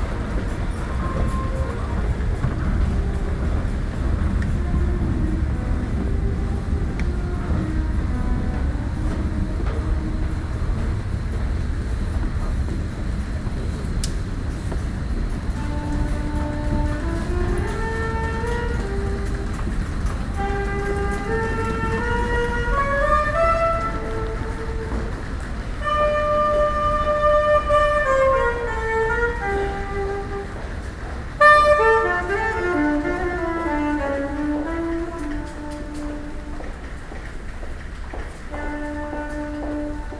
Montreal: Bonaventure Metro to Centre Bell - Bonaventure Metro to Centre Bell
equipment used: Ipod Nano with Belkin TuneTalk
Up the escalators towards Centre Bell